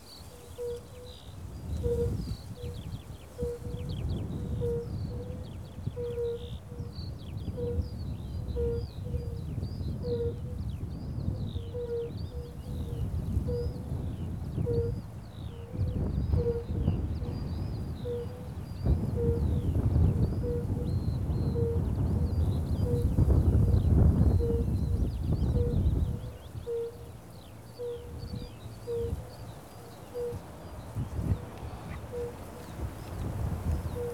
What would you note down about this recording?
no idea what sort of animal makes the continuous, pulsating, whining sound. it stopped immediately as i made a step forward and got back on sounding again as i back retreated. a military helicopter mixes in later in the recording.